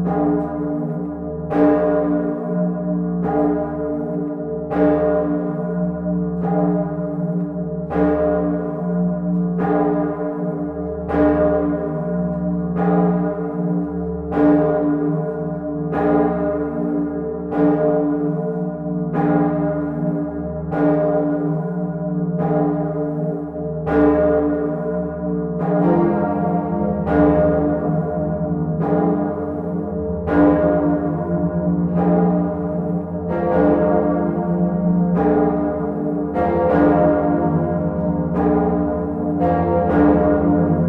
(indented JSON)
{
  "title": "Sens, France - Savinienne et Potentienne",
  "date": "2010-12-24 23:55:00",
  "description": "The two very big bells of the Sens cathedral.\n0:46 mn : the first stroke. The first bell, The Savinienne.\n2:33 : the second bell, the Potentienne.\nRecorded into the tower by -17°C ! It was extremely loud (135 dB, but it was said to us). Doves were flattened on the ground ! The first time they rang after 35 years of silence, an old person was crying, thinking the pope was dead.\nThese two bells are the few ones on the top of bells. Optimally to listen very loud, as it was inside.\nRecord made with Nicolas Duseigne on the christmas mass.",
  "latitude": "48.20",
  "longitude": "3.28",
  "altitude": "76",
  "timezone": "Europe/Paris"
}